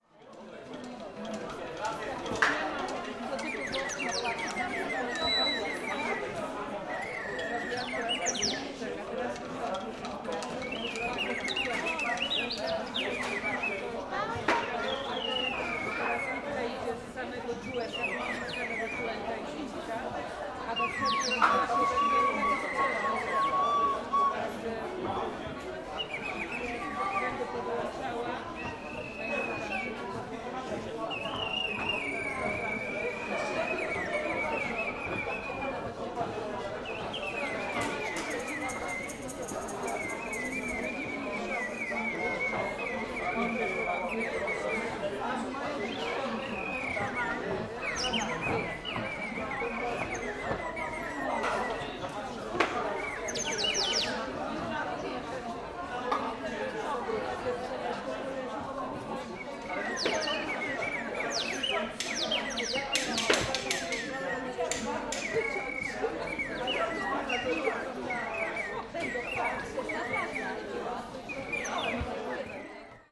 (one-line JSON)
{"title": "Szreniawa, National Museum of Farming - wooden toy booth", "date": "2013-04-27 15:53:00", "description": "a vendor presenting his wooden, hand-made toys. whistles, clappers and knockers.", "latitude": "52.32", "longitude": "16.80", "altitude": "92", "timezone": "Europe/Warsaw"}